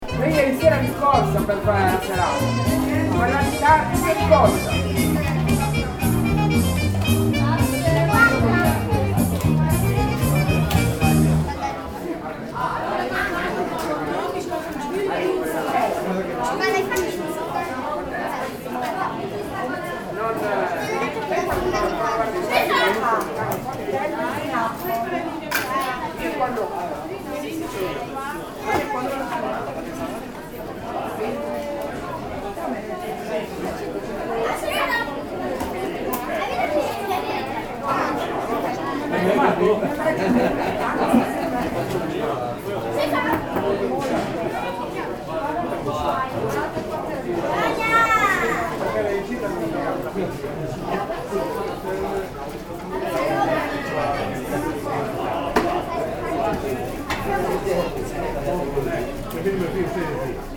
alto, fiesta sagra patata
village fiesta dedicated to the potato, here playback music and voices at the bar
soundmap international: social ambiences/ listen to the people in & outdoor topographic field recordings